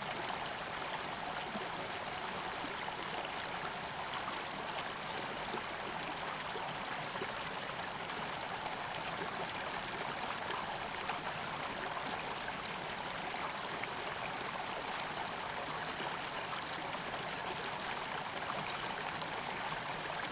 Leona Heights park creek, Oakland